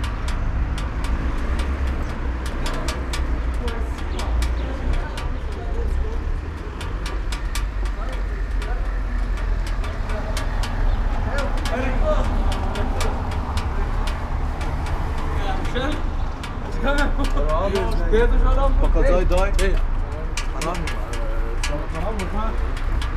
rain gutter, tyrševa - water drops, passers-by
Maribor, Slovenia